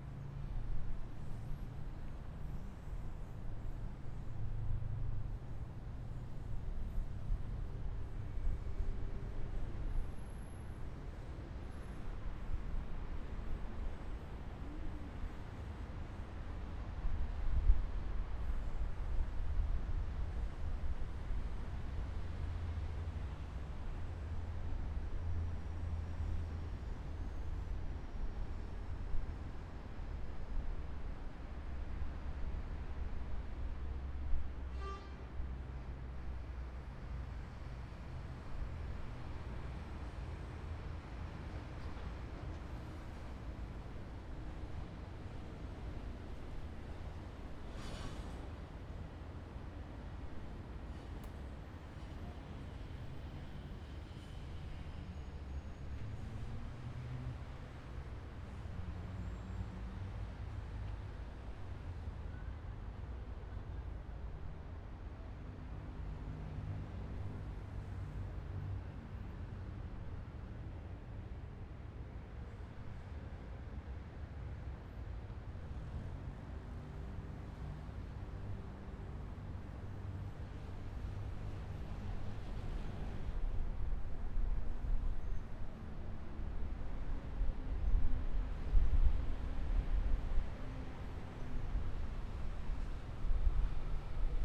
Bon-Secours, Marseille, France - ambiance terrain brûlé
camions pathak flûte
a-l.s, r.g, e.v roms